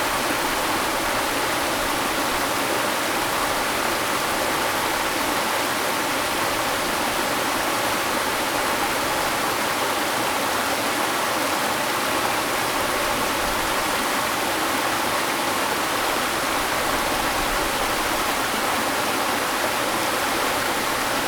五峰旗瀑布, Jiaoxi Township, Yilan County - Waterfall
Waterfalls and rivers
Zoom H2n MS+ XY
7 December 2016, 10:48